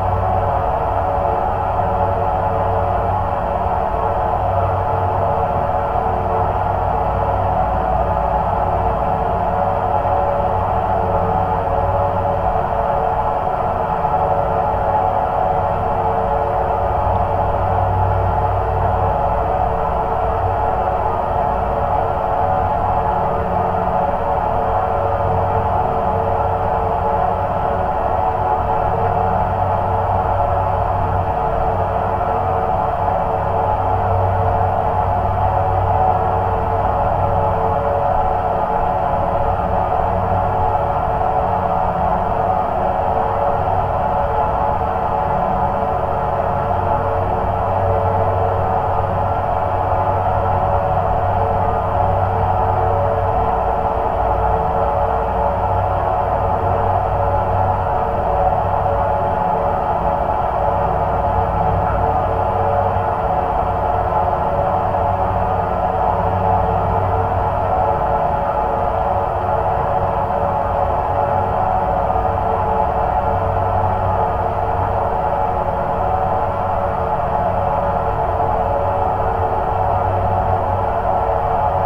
Geophones on metallic parts of the small dam. Drone.